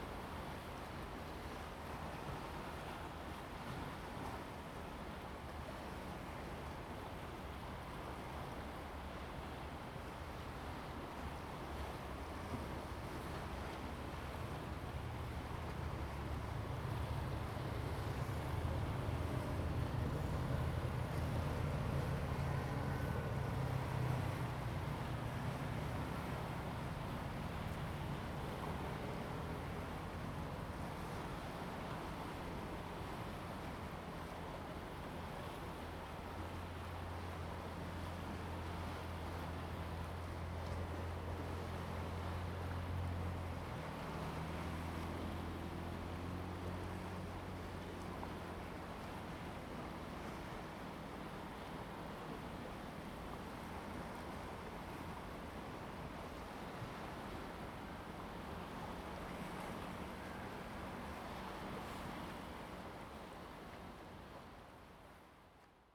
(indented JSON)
{
  "title": "落日亭, Hsiao Liouciou Island - On the coast",
  "date": "2014-11-01 13:39:00",
  "description": "On the coast, Wave and tidal\nZoom H2n MS+XY",
  "latitude": "22.32",
  "longitude": "120.35",
  "altitude": "11",
  "timezone": "Asia/Taipei"
}